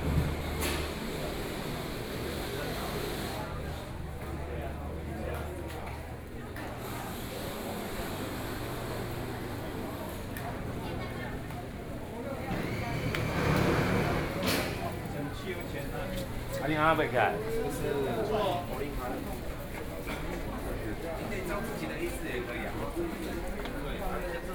Shífēn St, New Taipei City - soundwalk